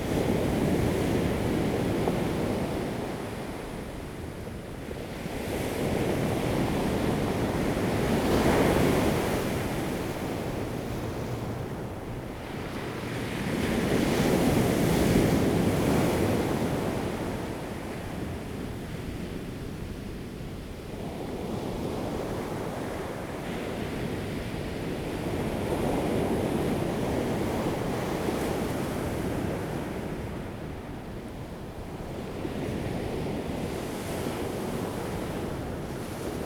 Sound of the waves, The weather is very hot
Zoom H2n MS +XY
Taitung County, Daren Township, 台26線, 5 September 2014, 14:06